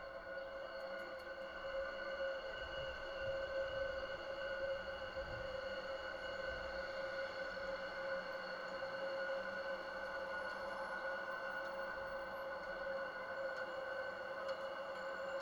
Kottbusser Tor, Kreuzberg, Berlin - railing, metal structures, contact
waves of sound heard through contact mics attached to metal structures at Kottbusser Tor, berlin, a vibrating place anyway
(Sony PCM D50, DIY contact mics)
Berlin, Germany, 11 June 2016